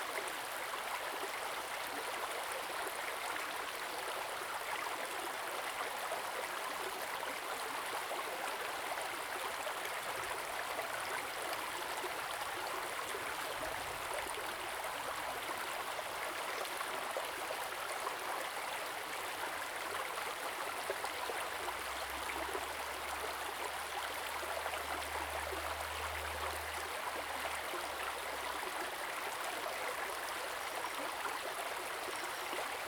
{
  "title": "成功里, 埔里鎮, Nantou County - Upstream",
  "date": "2016-04-20 15:23:00",
  "description": "stream, Upstream\nZoom H2n MS+XY",
  "latitude": "23.96",
  "longitude": "120.89",
  "altitude": "464",
  "timezone": "Asia/Taipei"
}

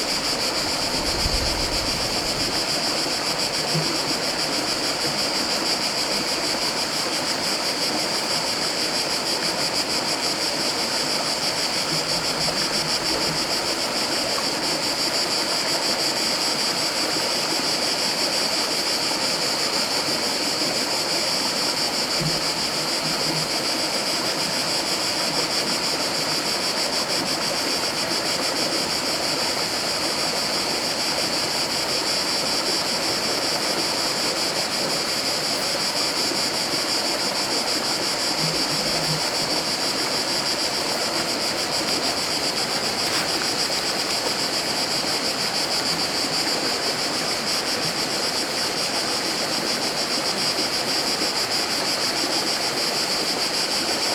{
  "title": "Burgio, AG, Italia [hatoriyumi] - Fiume Sosio e cicale",
  "date": "2012-07-27 16:01:00",
  "description": "Fiume Sosio e cicalìo in un pomeriggio d'Estate",
  "latitude": "37.64",
  "longitude": "13.27",
  "altitude": "247",
  "timezone": "Europe/Rome"
}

